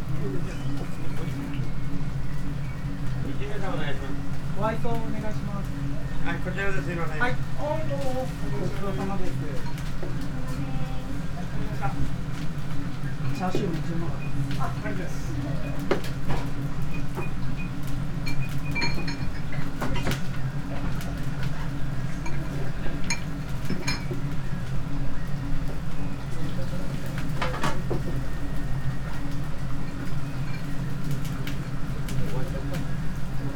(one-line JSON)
{"title": "chome asakusa, tokyo - noodle soup restaurant", "date": "2013-11-16 17:33:00", "latitude": "35.71", "longitude": "139.80", "altitude": "10", "timezone": "Asia/Tokyo"}